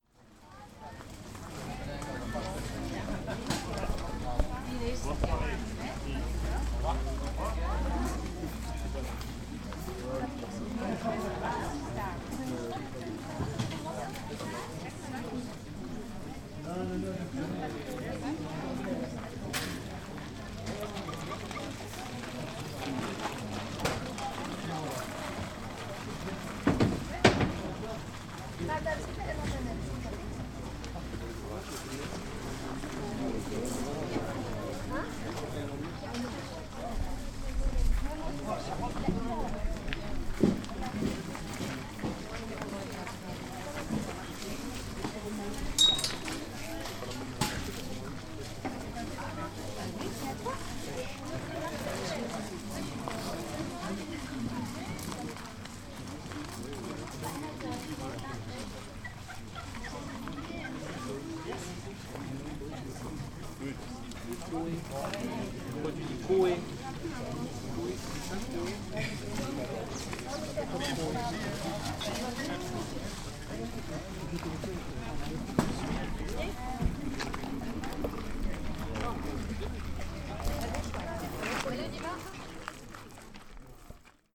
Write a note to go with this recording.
I'm standing in the middle of a small green market place. People cricle round the stools of local products...